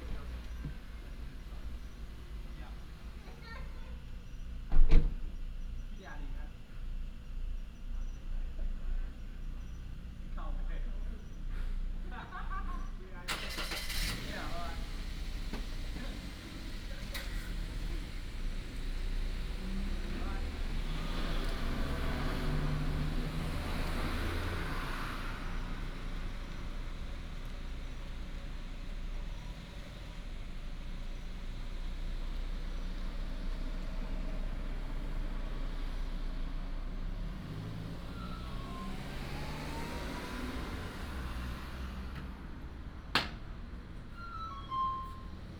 濱海公路19號, Xiangshan Dist., Hsinchu City - Late at the convenience store square
Late at the convenience store square, traffic sound, Binaural recordings, Sony PCM D100+ Soundman OKM II